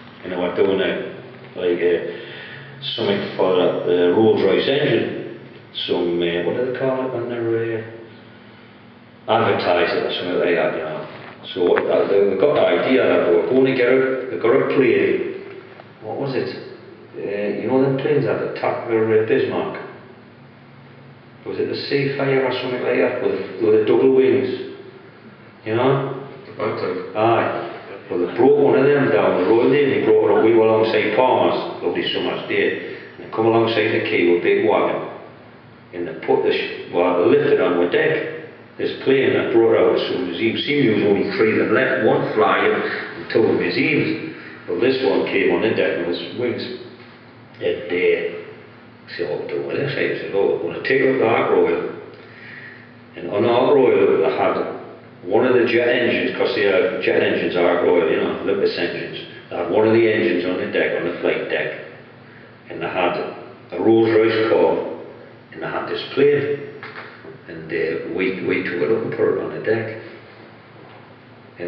The BALTIC Mill film screening
Narration from archival footage of ship building along the Tyneside in Newcastle in the early 1960s
Gateshead, UK, 10 June 2010